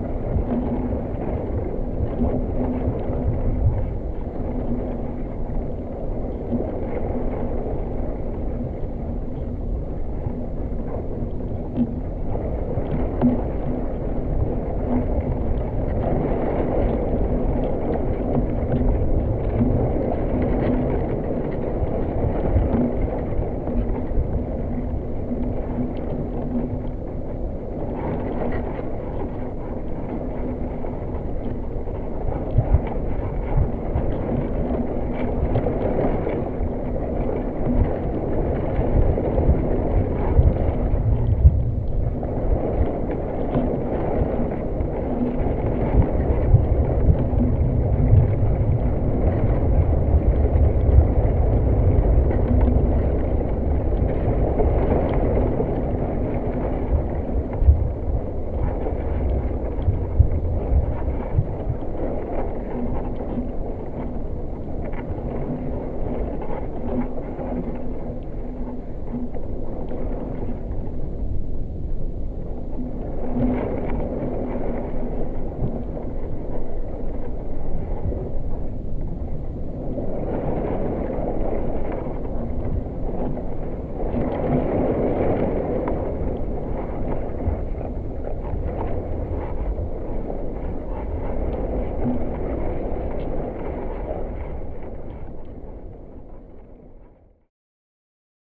Jūrmala, Latvia, under the roots
stong wind at the sea. hydrophone is burried under the rooths of grass ans amall trees, additional geophone is sticked in sand
Vidzeme, Latvija, 21 July